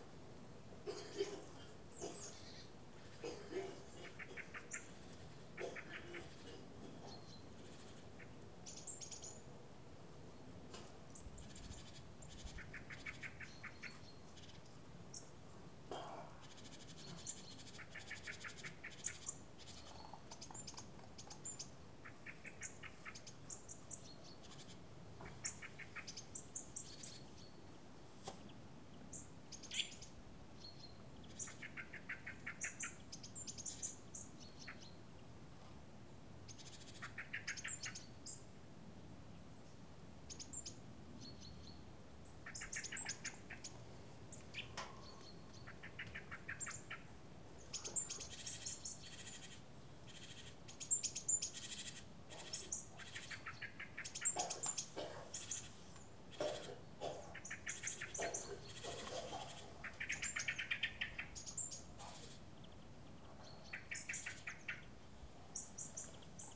Beselich Niedertiefenbach - backyard, morning ambience
quiet morning, birds, sounds from inside
(Sony PCM D50)